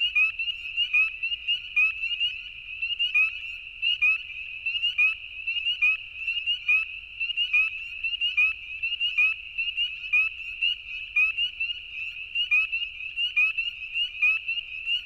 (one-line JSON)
{"title": "Concession Road 17 E, Tiny, ON, Canada - Peepers - Tiny Township - Concession Road 17East", "date": "2019-04-25 21:30:00", "description": "Roadside recording of spring peepers in ditch beside the road. Night recording less than 1hr after sunset. At 00:42 sec coyotes can be heard in the distance. No post processing.", "latitude": "44.79", "longitude": "-80.01", "altitude": "228", "timezone": "America/Toronto"}